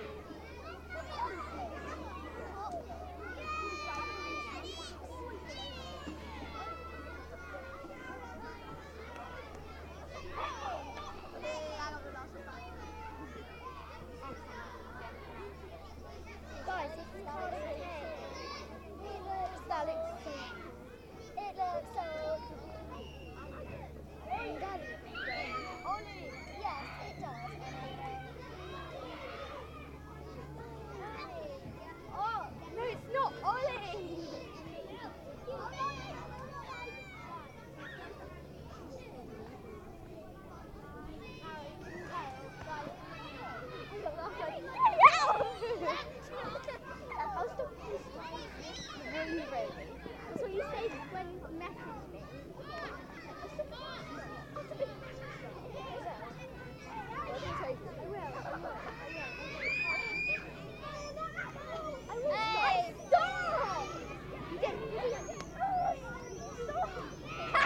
Symondsbury, UK - School's Out
World Listening Day - Sounds Lost and Found - the timeless sound of children at playtime and the ringing of the school bell to call them in again.